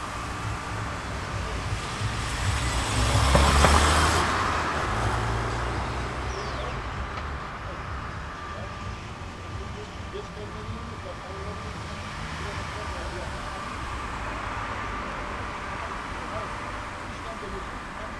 berlin wall of sound-kommandantenstr. j.dickens 140909
Berlin, Germany